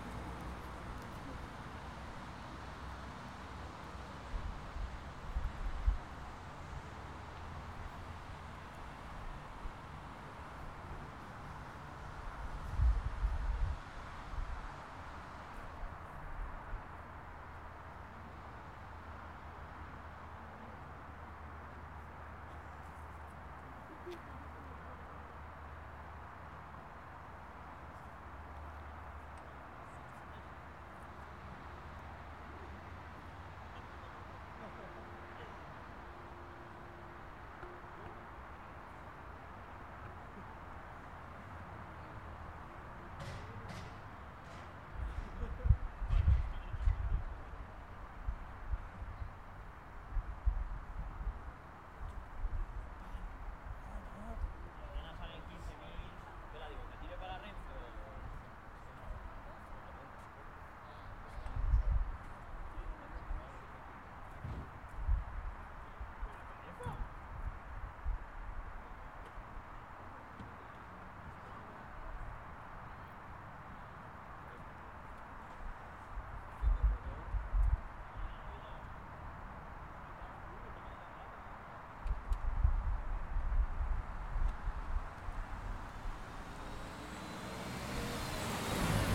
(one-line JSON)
{"title": "Calle Freud, Madrid, España - The parking sound", "date": "2018-11-29 19:15:00", "description": "This audio shows the different sounds that we can hear in the parking lot of the university campus located next to the train station.\nYou can hear:\n- Cars going in and out.\n- Doors of cars, opening and closening.\n- People talking far away.\n- Traffic noise far away.\n- Steps of people walking.\nGear:\n- Zoom h4n\n- Cristina Ortiz Casillas\n- Daniel Daguerre León\n- Carlos Segura García", "latitude": "40.54", "longitude": "-3.70", "altitude": "728", "timezone": "Europe/Madrid"}